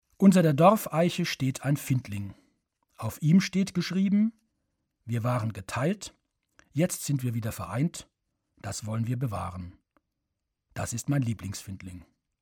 dahrendorf - findling des tages
Produktion: Deutschlandradio Kultur/Norddeutscher Rundfunk 2009